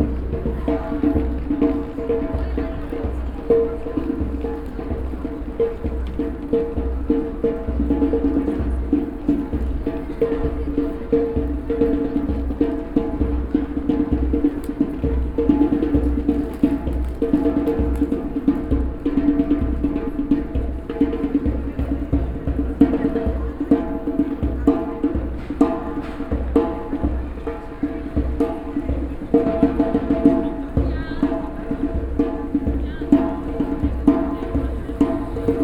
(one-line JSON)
{"title": "Katharinen-Treppe, Dortmund, Germany - onebillionrising, steps n drum...", "date": "2018-02-14 16:00:00", "description": "...starting at the St Katherine steps and walking towards the meeting point for the onebillionrising dance/ campaign / “flash mop”; enjoying the sound of steps rushing upstairs and downstairs; the sound of a drum getting closer, voices louder…\nglobal awareness of violence against women", "latitude": "51.52", "longitude": "7.46", "altitude": "87", "timezone": "Europe/Berlin"}